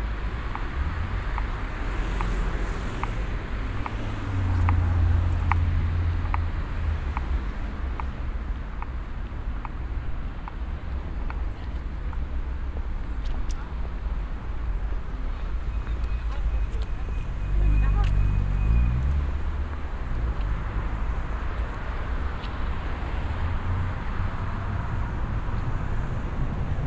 berlin, potsdamer str, acoustic traffic light sign at a street crossing
Berlin, Germany